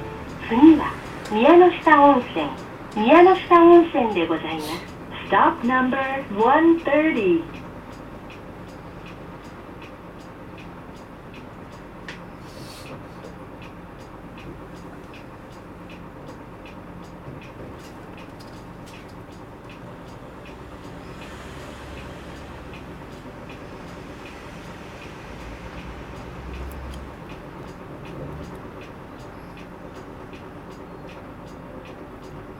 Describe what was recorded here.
Bus to to Hakone-Yumoto. Recording with Olympus DM-550